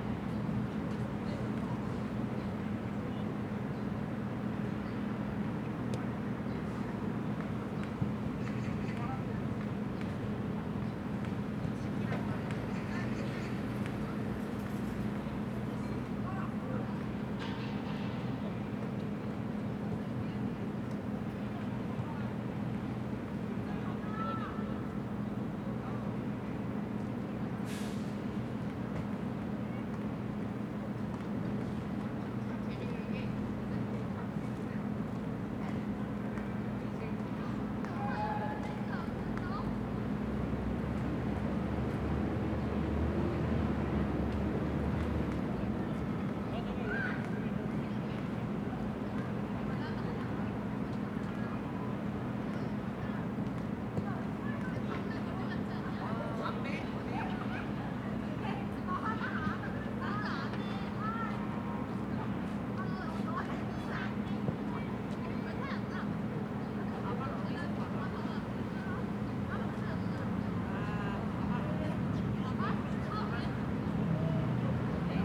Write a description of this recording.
Seocho Elementary School, kids playing soccer